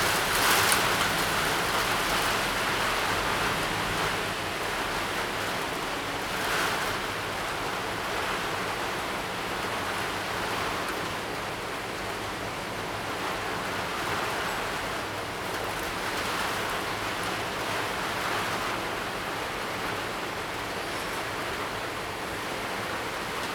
大仁街, Tamsui District - Upcoming typhoon
Upcoming typhoon, Gradually become strong wind and rain
Zoom H2n MS+XY
Tamsui District, New Taipei City, Taiwan, August 2015